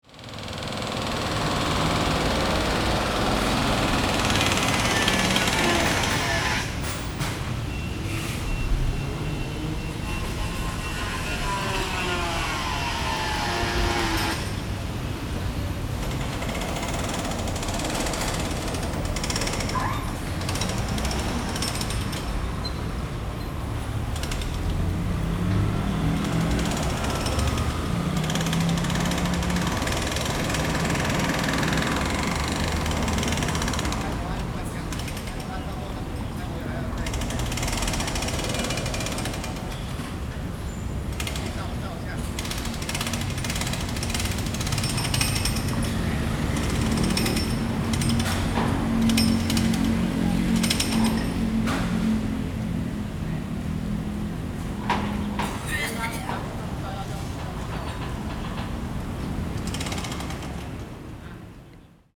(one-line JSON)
{"title": "Ln., Guangfu S. Rd., Da’an Dist. - Construction Sound", "date": "2011-06-16 15:49:00", "description": "Construction Sound, traffic sound\nZoom H4n + Rode NT4", "latitude": "25.04", "longitude": "121.56", "altitude": "14", "timezone": "Asia/Taipei"}